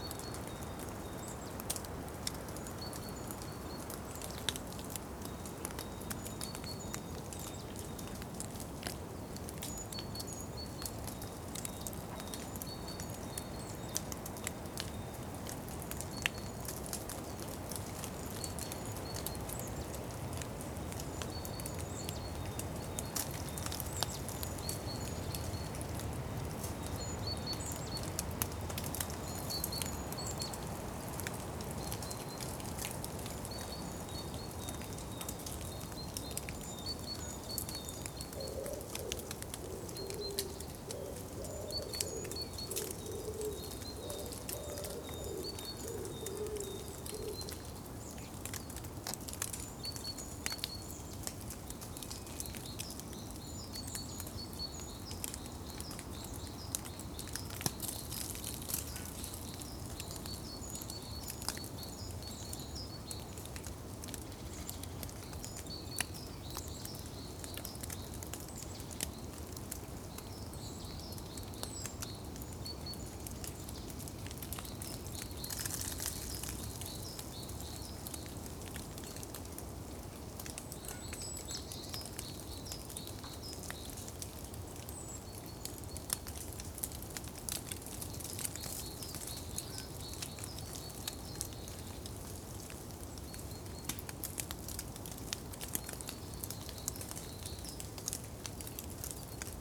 Forest Reserve Stachel Nature Park Haßberge - Meltin snow Nature Park Hassberge Germany -Tree ear microphone setup
The area here at the ''Stachel'' forest reserve can only be reached on foot. The hike leads across extensive meadows to the edge area between open country and the forest area where this recording was made. This field recording was recorded with a tree ear microphone setup. This extraordinary living space has always fascinated me. Now I am trying to make these unique moments audible for now and for the future of this very special place here in this protected area.
Landkreis Haßberge, Bayern, Deutschland